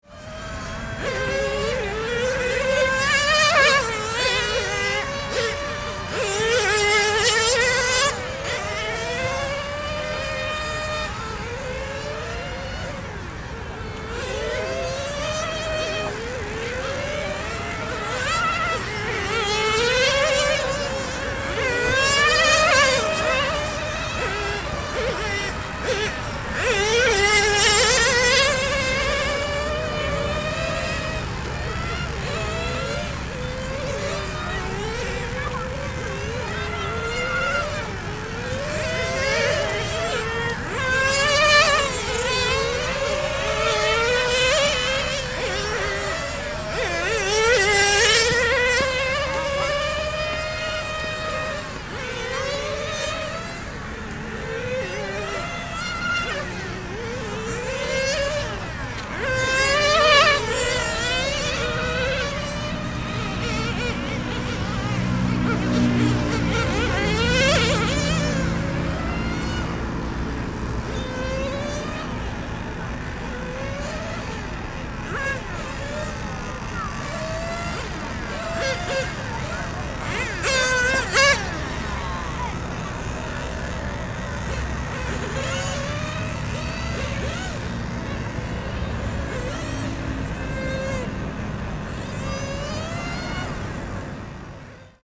Floodway, New Taipei City - Remote control car
Remote control car, Zoom H4n+Rode NT4
New Taipei City, Taiwan, 12 February 2012